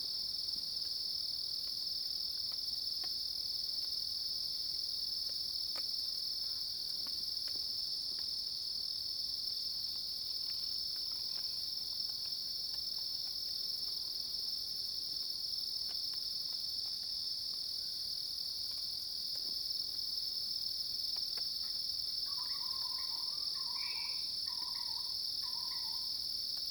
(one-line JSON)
{"title": "華龍巷, 南投縣魚池鄉, Taiwan - Insects sounds", "date": "2016-09-19 06:32:00", "description": "Insects called, Birds call, Cicadas cries, Facing the woods\nZoom H2n MS+XY", "latitude": "23.93", "longitude": "120.89", "altitude": "755", "timezone": "Asia/Taipei"}